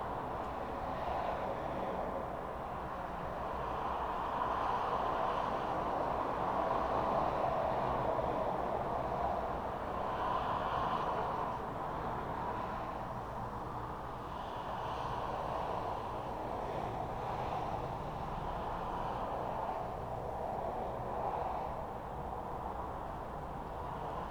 Goss - Grove, Boulder, CO, USA - Bedroom Window